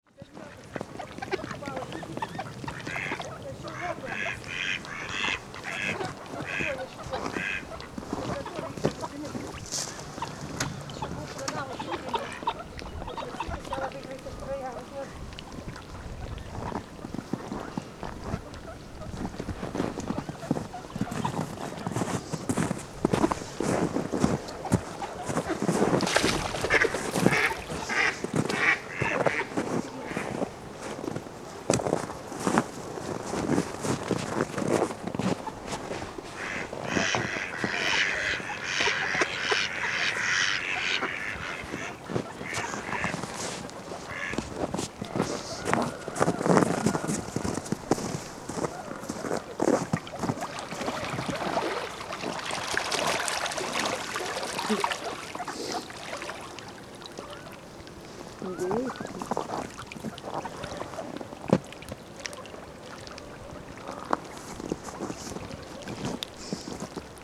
from the footbridge over frozen little river